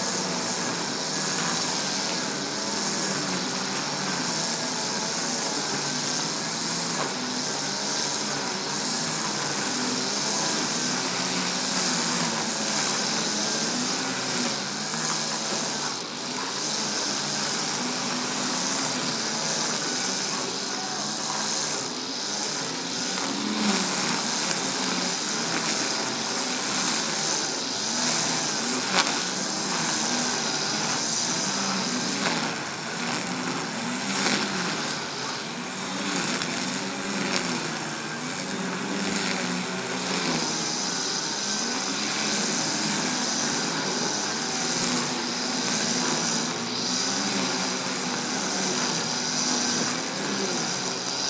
SQN, Brasília, DF, Brasil - cutting grass
Workers cutting grass.